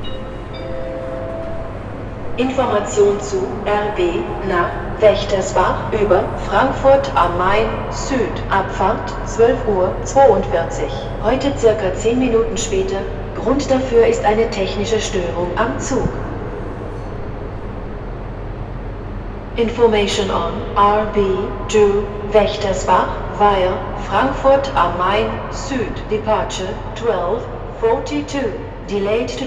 Actually the recorded platform also last week was 18. While on the 20th of march an anouncement is to be heard that the train to Bruessels does not leave, there is nothing today. The train is still in the schedule, but it is not anounced anymore. Just silence. What is to be heard are the anouncements for regional trains, in this case to Wächtersbach. The microphone walks through a tunnel to a different platform (11).